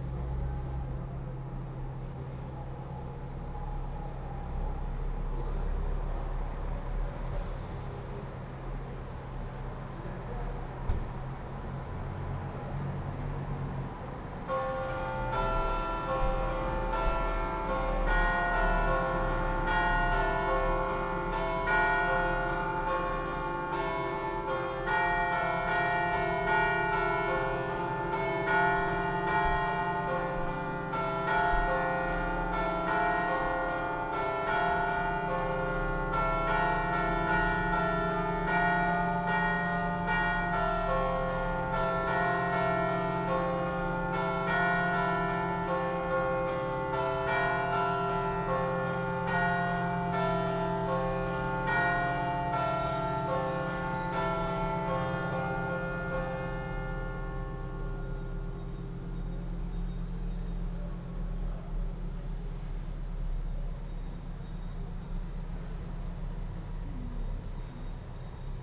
Place de Paris, Vaise - Lyon 9e, France - Cloche de lAnnonciation Lyon Vaise
Volée de cloche de l'église de l'Annociation, Vaise, Lyon 9e arrondissement